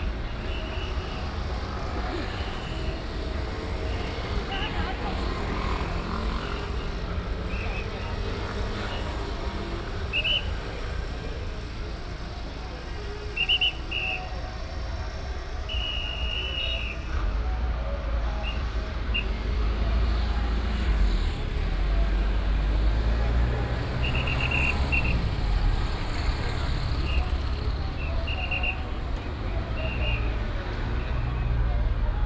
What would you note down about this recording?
In the road corner, Traffic sound, Whistle sound, Matsu Pilgrimage Procession